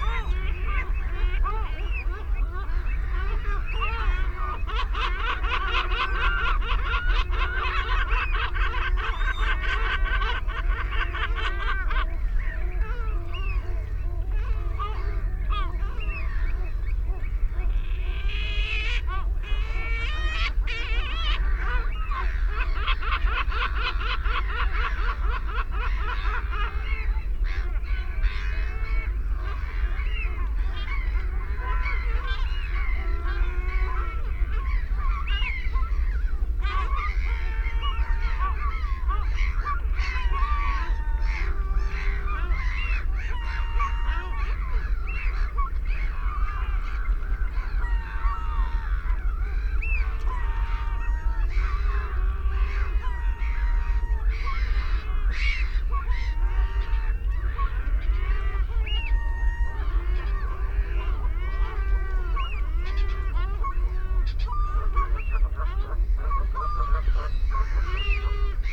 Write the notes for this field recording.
Belpers Lagoon soundscape ... RSPB Havergate Island ... fixed parabolic to minidisk ... birds calls from ... herring gull ... black-headed gull ... canada goose ... ringed plover ... avocet ... redshank ... oystercatcher ... shelduck ... background noise from shipping and planes ...